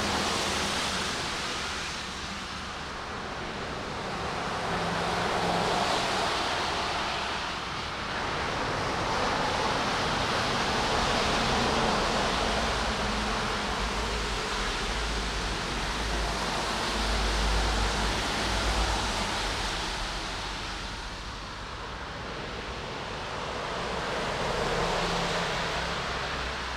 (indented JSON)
{
  "title": "Montreal: St. Remi Underpass - St. Remi Underpass",
  "description": "equipment used: Digital Audio Recorder (PMD660) with two Dynamic mic\nField recording of the St-Remi Underpass next to my house, I love the echo and the way the cars zoom past on this recording...",
  "latitude": "45.47",
  "longitude": "-73.59",
  "altitude": "24",
  "timezone": "America/Montreal"
}